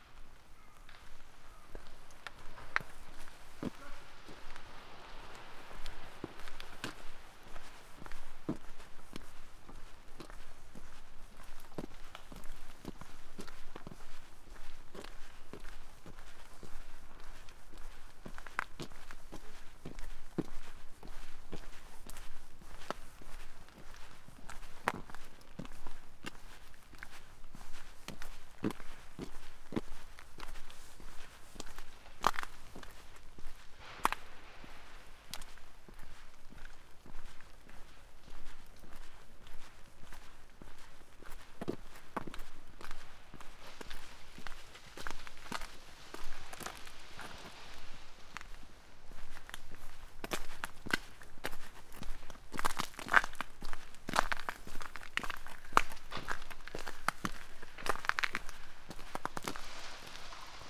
path of seasons, ponds, maribor - tight embrace of frozen rain
beloved trees are breaking all over
Maribor, Slovenia, 2 February